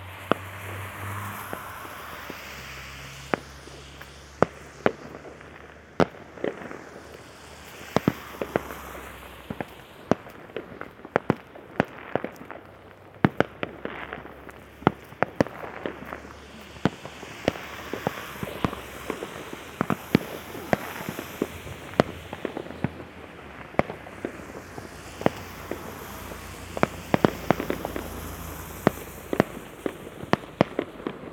New Year's fireworks, Severodvinsk, Russia - New Year's fireworks
New Year's fireworks.
Severodvinsk, Arkhangelsk Oblast, Russia